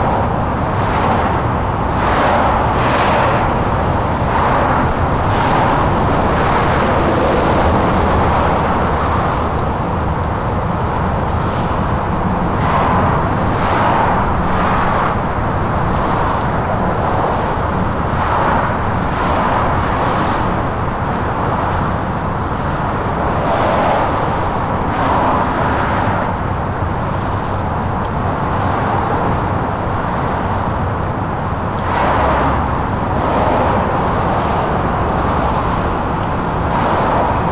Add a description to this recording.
equipment used: Korg Mr 1000, This was taken on some abandon train tracks between to murals of graffitti, i walk towards some water falling from the Turcot Int. at the end